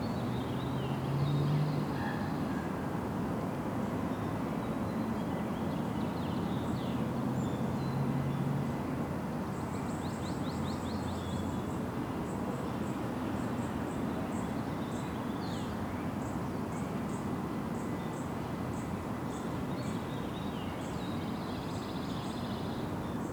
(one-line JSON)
{"title": "Suffex Green Ln NW, Atlanta, GA, USA - A Curve In the Road", "date": "2019-02-04 17:32:00", "description": "This recording was made along a bend in the road near a leasing office. The recording features cars and golf carts traveling around the bend, birds, relatively indistinct speech from people walking along one side of the road, and an overhead plane. Recording done with a Tascam Dr-22WL and a dead cat windscreen. Some EQ was applied to cut out rumble in the low end.", "latitude": "33.85", "longitude": "-84.48", "altitude": "287", "timezone": "America/New_York"}